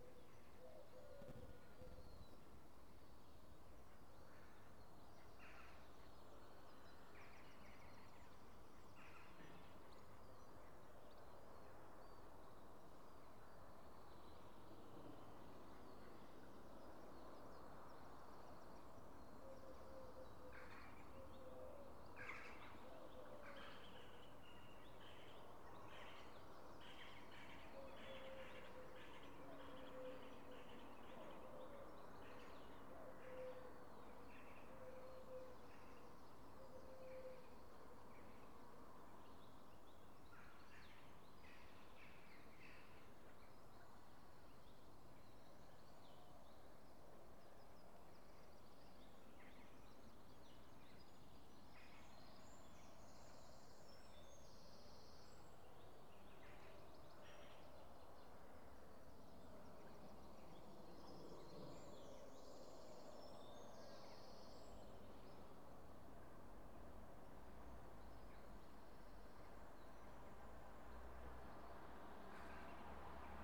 London Rd, Dorchester, UK - Roadside sounds on a Sunday morning
Sitting on the bench by the bridge on London Road, Dorchester, at about 6.25 am.
22 June 2017